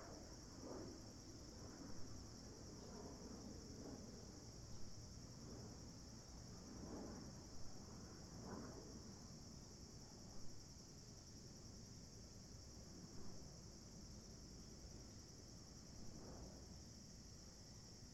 The College of New Jersey, Pennington Road, Ewing Township, NJ, USA - Lake Ceva
Lake Ceva at The College of New Jersey